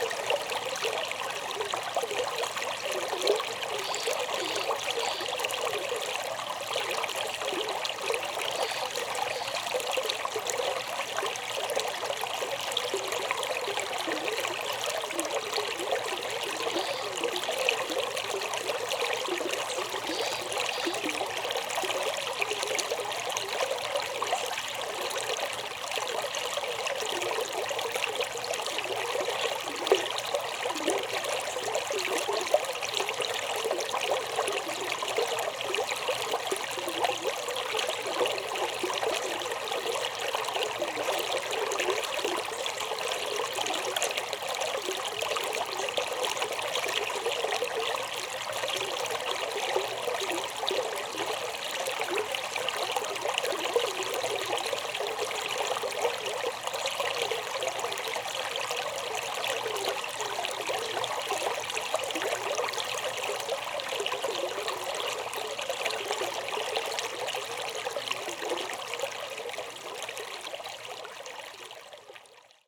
Recorded with a pair of DPA 4060's and a Marantz PMD661
TX, USA